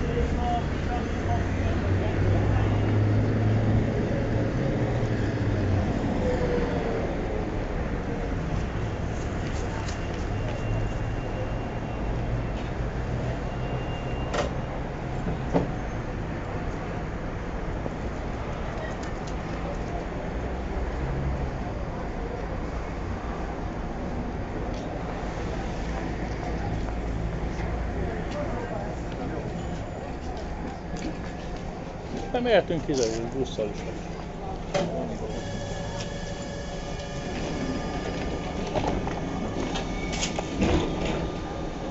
XIX. kerület, Budapest, Magyarország - street vendors
Street vendors outside the underground station selling peppers, radishes and lilies of the valley. (Also a nice example of folk etymology: the man shouting "vitaminpaprika" instead of "pritamine paprika") A tram leaves towards the end.